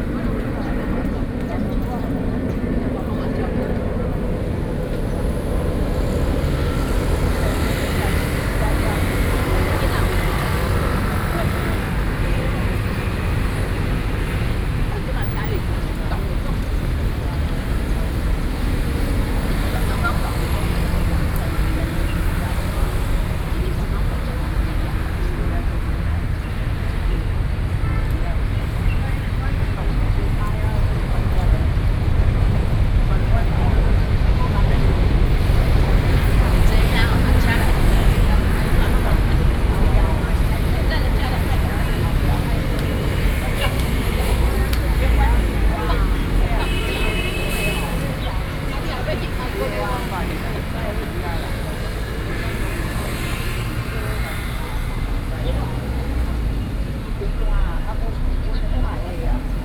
Sec., Datong Rd., Xizhi Dist., New Taipei City - Bus stop